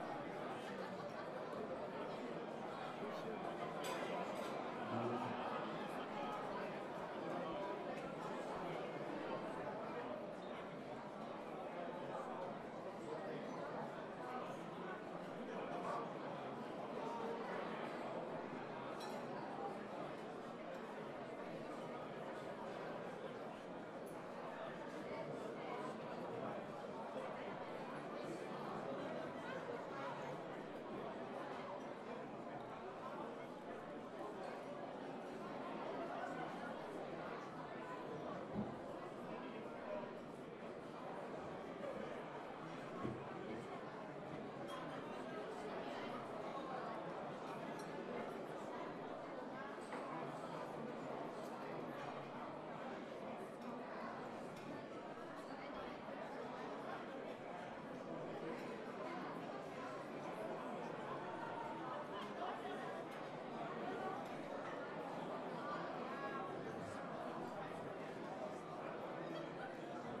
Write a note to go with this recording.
"Feierabend" Leiure-time at a brewery in Cologne.